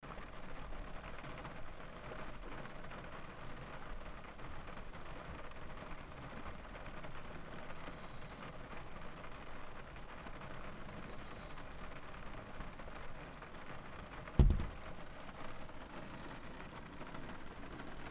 rain on car roof - Köln, rain on car roof
recorded may 30, 2008 - project: "hasenbrot - a private sound diary"